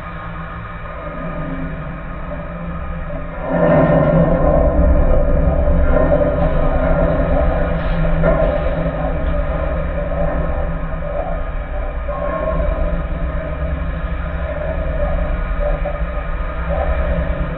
Šv. Stepono g., Vilnius, Lithuania - Street electricity pole
Dual contact microphone recording of an ordinary street pole. Traffic hum and occasional passing trolleybus resonate strongly through the metal body. Recorded using ZOOM H5.